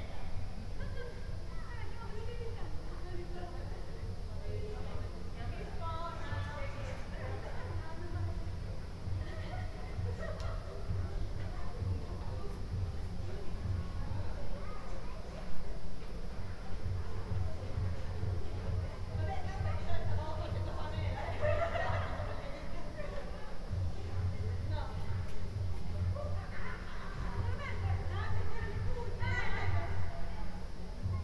Ascolto il tuo cuore, città, I listen to your heart, city. Several chapters **SCROLL DOWN FOR ALL RECORDINGS** - Easter Monday afternoon with laughing students in the time of COVID19: Soundscape.
"Easter Monday afternoon with laughing students in the time of COVID19": Soundscape.
Chapter CLXVI of Ascolto il tuo cuore, città. I listen to your heart, city
Monday, April 5th, 2021. Fixed position on an internal terrace at San Salvario district Turin, One year and twenty-six days after emergency disposition due to the epidemic of COVID19.
Start at 3:58 p.m. end at 4:23 p.m. duration of recording 25’00”
Piemonte, Italia